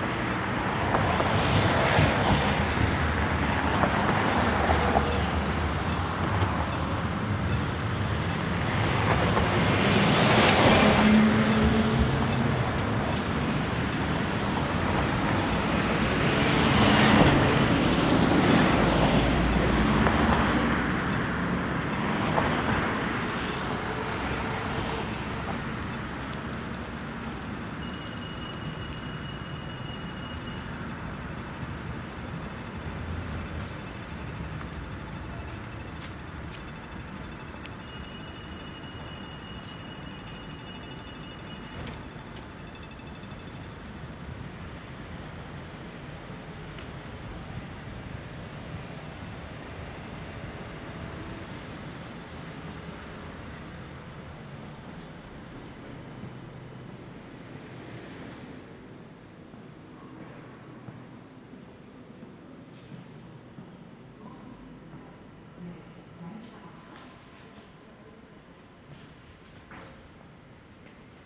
Boulevard Général Jacques, Ixelles, Belgique - Tram 7 and road ambience
Getting out of the tram, then cars and trucks.
Tech Note : Ambeo Smart Headset binaural → iPhone, listen with headphones.